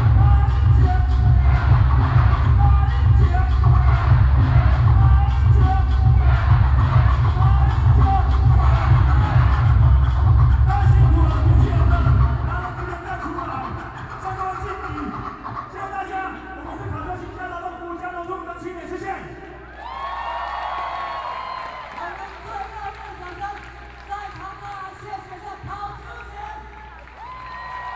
Zhongzheng Dist., Taipei City - Shouting slogans
Protest songs, Cries, Shouting slogans, Binaural recordings, Sony PCM D50 + Soundman OKM II